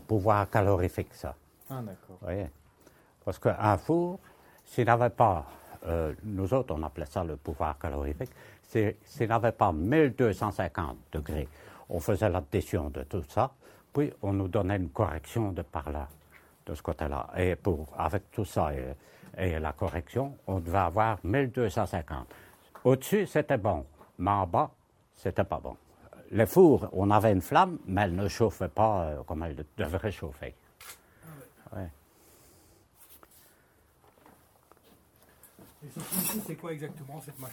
Valère Mennechez
An old worker testimony on the old furnaces of the Anderlues coke plant. We asked the workers to come back to this devastated factory, and they gave us their remembrances about the hard work in this place.
Recorded with Patrice Nizet, Geoffrey Ferroni, Nicau Elias, Carlo Di Calogero, Gilles Durvaux, Cedric De Keyser.
Anderlues, Belgium, March 2009